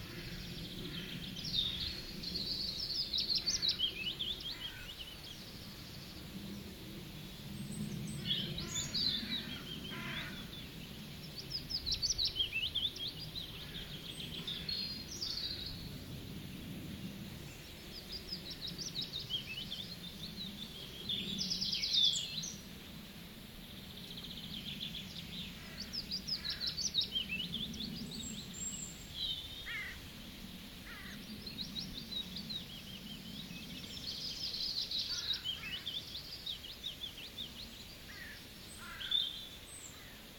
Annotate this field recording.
Birds calling close and from a distance. Zoom H2N